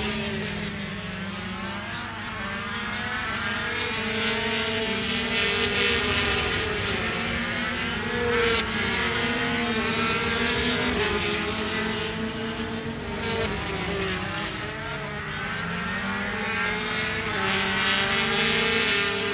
Derby, UK - british motorcycle grand prix 2007 ... 125 practice ...
british motorcycle grand prix 2007 ... 125 practice ... one point stereo mic to minidisk ...
England, United Kingdom